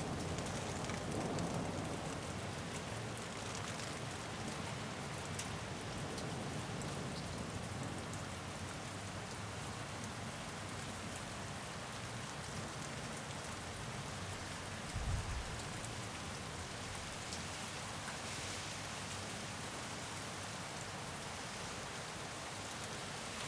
a sudden and short thunderstorm on a winter evening
tech note: Olympus LS5, builtin mics
Köln, Deutschland